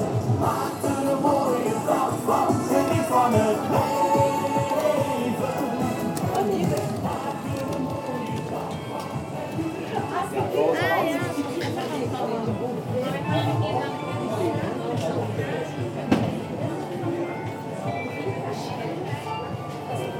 Aalst, België - Local market
Grote Markt, Nieuwstraat, Hopmarkt. Long walk in the local market, taking place on Saturday morning. At several times, we hear the elderly talking to each other.